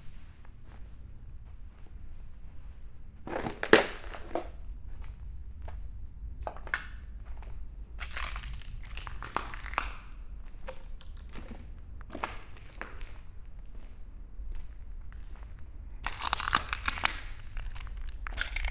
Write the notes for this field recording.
In first, water drops falling from a rooftop. After, walking in the abandoned factory, on broken glass and garbage everywhere. This abandoned place is completely trashed.